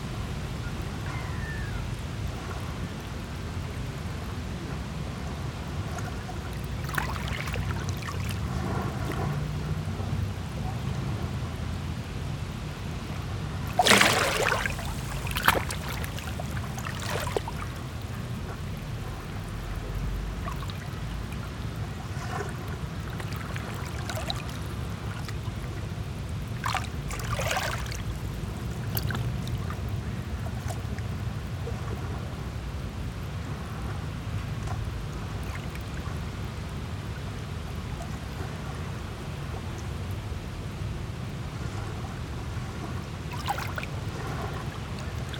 Beach for Dogs, Gdańsk, Poland - (845 AB DPA) Calm waves at the beach for dogs
Recording of waves at the beach. This has been done simultaneously on two pairs of microphones: MKH 8020 and DPA 4560.
This one is recorded with a pair of DPA 4560, probably not a precise AB with mic hanging on the bar, on Sound Devices MixPre-6 II.
województwo pomorskie, Polska, October 2021